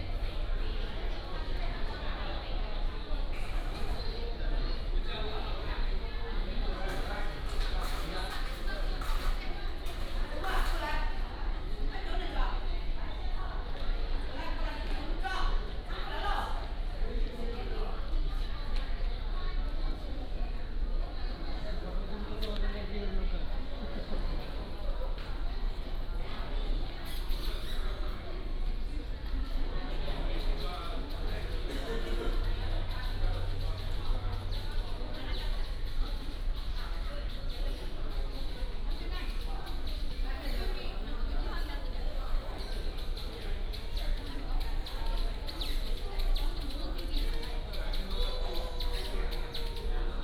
{
  "title": "Kaohsiung International Airport, Taiwan - In the airport lobby",
  "date": "2014-11-02 12:40:00",
  "description": "In the airport lobby",
  "latitude": "22.57",
  "longitude": "120.35",
  "altitude": "12",
  "timezone": "Asia/Taipei"
}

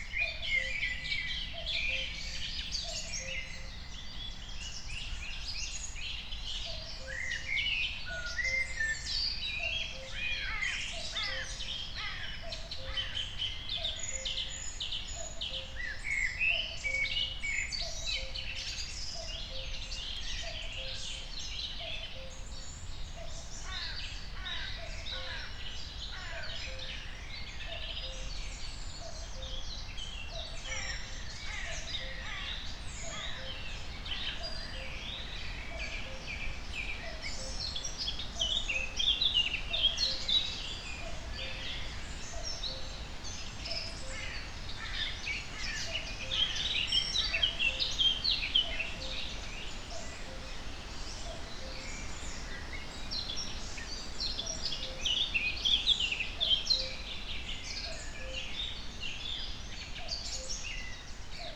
{
  "date": "2021-06-13 04:00:00",
  "description": "04:00 Berlin, Wuhletal - wetland / forest ambience",
  "latitude": "52.52",
  "longitude": "13.58",
  "altitude": "43",
  "timezone": "Europe/Berlin"
}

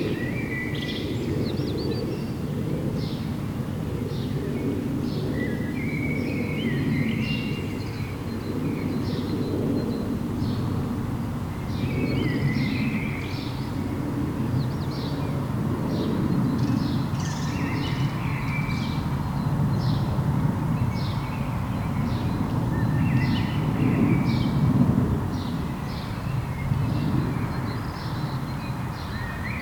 {
  "title": "August-Bebel-Straße 12, 06108 Halle (Saale), Deutschland - Saturday early Morning, birds awake, city waking up",
  "date": "2022-06-04 04:29:00",
  "description": "A long early morning in the city of Halle, the recording starting at 4:29 and lasting for about 40 minutes. There is the general city hum with a Blackbird dominating over other birds, occasional cars, few people and an airplane.",
  "latitude": "51.49",
  "longitude": "11.97",
  "altitude": "103",
  "timezone": "Europe/Berlin"
}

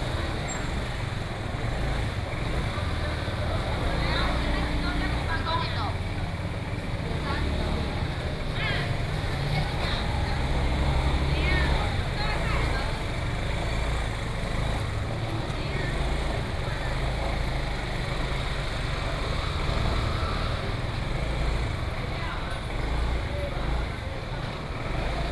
{
  "title": "員林華成市場, Yuanlin City - Old market",
  "date": "2017-01-25 09:16:00",
  "description": "A variety of market selling voice, Traffic sound, Walking through the market",
  "latitude": "23.96",
  "longitude": "120.57",
  "altitude": "31",
  "timezone": "GMT+1"
}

